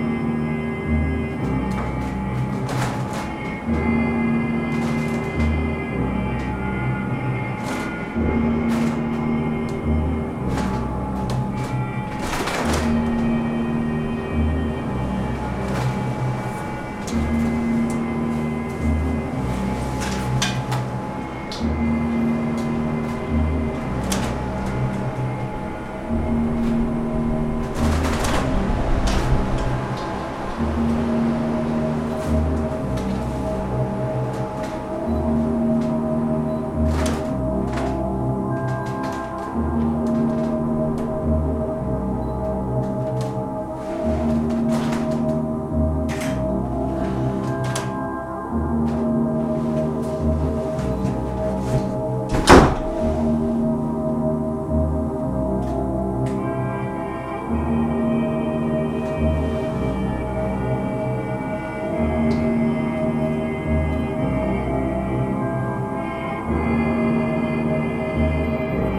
Samphire Hoe Tower by Jon Easterby for Sustrans on "Samphire Hoe" - new land formed from tailings of the Channel Tunnel.